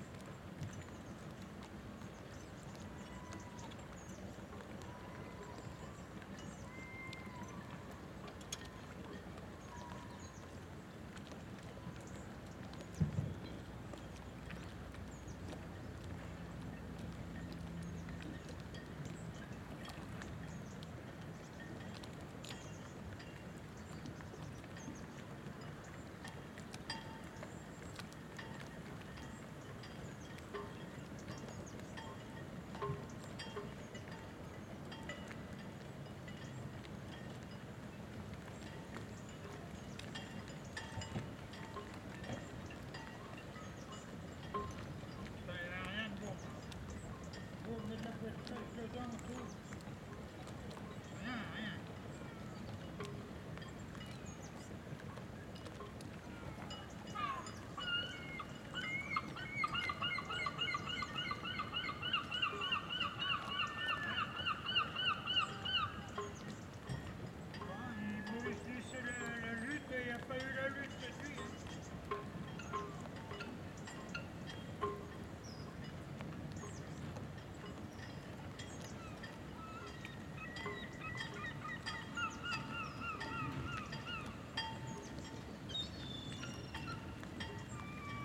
Un matin dans le Finistère sud.